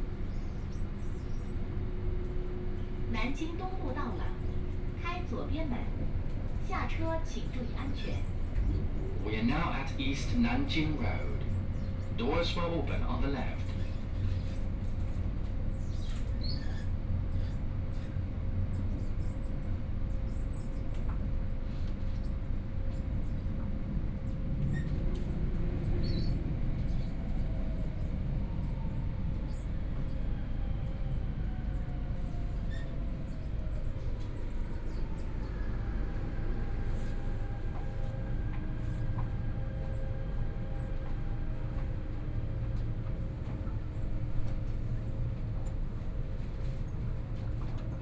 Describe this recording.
Out of the train entering the station interchange, Line10 (Shanghai Metro), from North Sichuan Road station to East Nanjing Road station, Binaural recording, Zoom H6+ Soundman OKM II